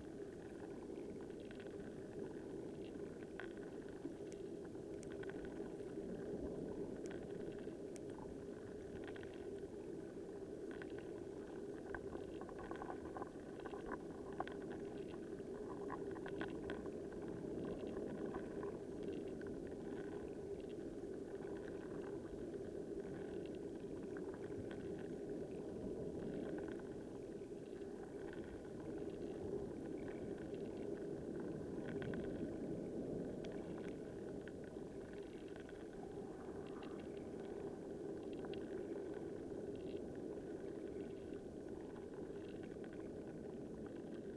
Royal National Park, NSW, Australia - (Spring) Rock Pool Near Little Marley Beach
Periwinkles and other creatures making delicate, quiet sounds in this rockpool, the roar of the ocean can be heard in the background. About 90 seconds in you hear a helicopter fly over. Not even the creatures in the rock pool are immune to the dense air traffic of the Royal National Park.
Two JrF hydrophones (d-series) into a Tascam DR-680.
New South Wales, Australia, 24 September 2014